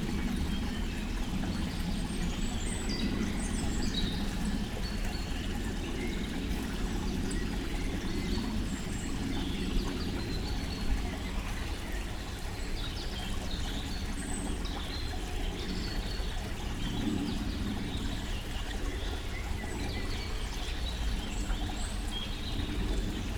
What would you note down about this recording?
Köln Thielenbruch forest, on a wooden bridge over creek Kemperbach, forest ambience in early spring, (Sony PCM D50, DPA4060)